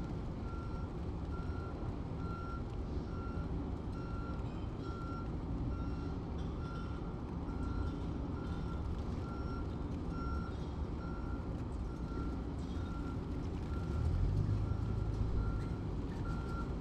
Northwest Berkeley, Berkeley, CA, USA - recycling center 2.
five months later, same place -- bottles return worth $17.01, unfortunate business but good noisy, industrial recording